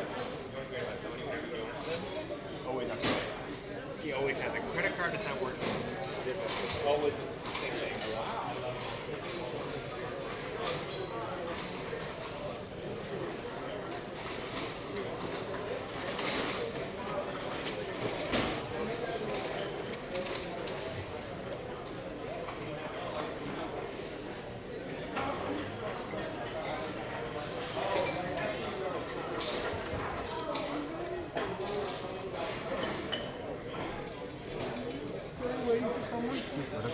Central LA, Los Angeles, Kalifornien, USA - canter's deli
canter's deli, late lunch time. customers, cutlery and dishes..
22 January 2014